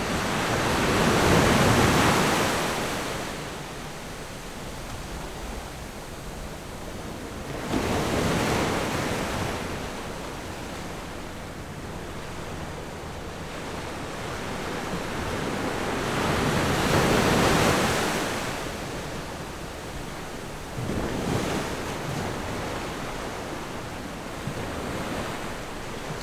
芹壁村, Beigan Township - Sound wave
Sound wave
Zoom H6 +Rode NT4
馬祖列島 (Lienchiang), 福建省, Mainland - Taiwan Border, 13 October 2014